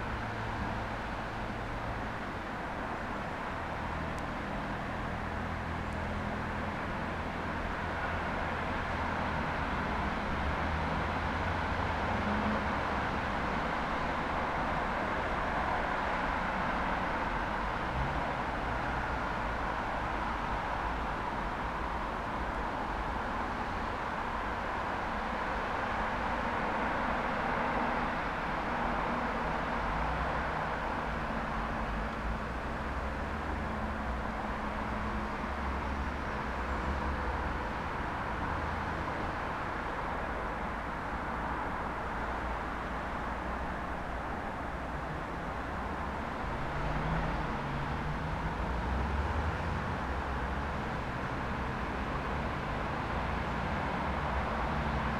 Lithuania, Kedainiai, traffic in the distance
just some traffic
18 November